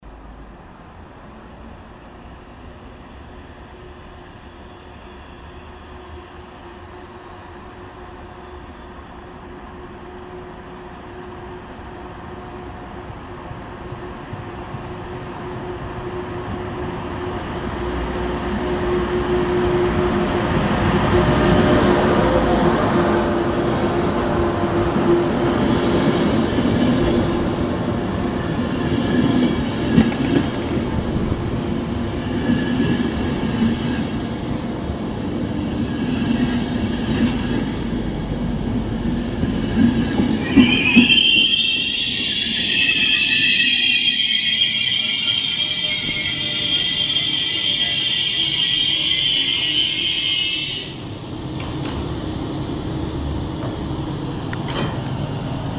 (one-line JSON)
{"title": "Stazione di Chiavari", "description": "an arriving trains futuristic fury...", "latitude": "44.32", "longitude": "9.32", "altitude": "6", "timezone": "Europe/Berlin"}